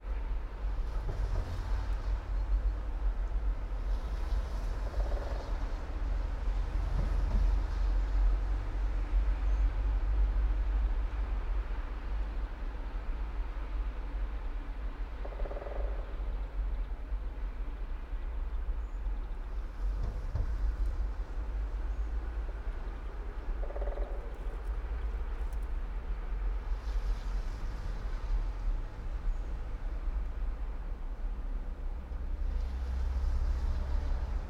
all the mornings of the ... - jan 14 2013 mon
Maribor, Slovenia, 14 January 2013, 08:48